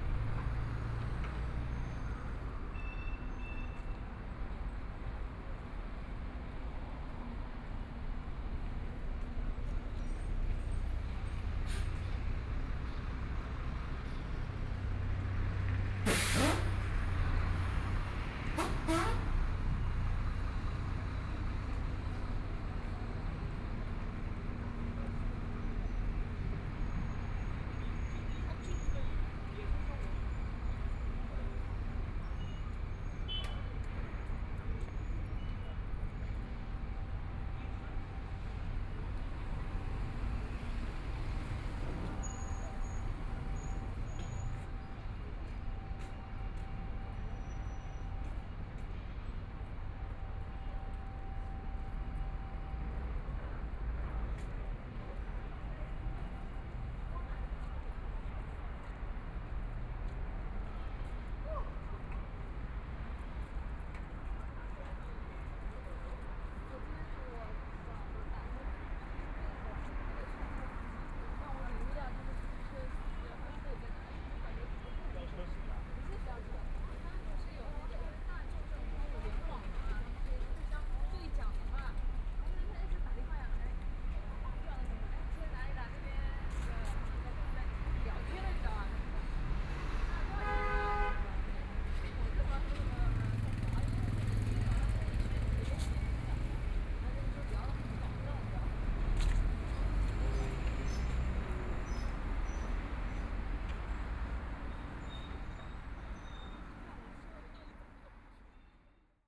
Noon time, in the Street, Walking through a variety of shops, Construction Sound, Traffic Sound, Binaural recording, Zoom H6+ Soundman OKM II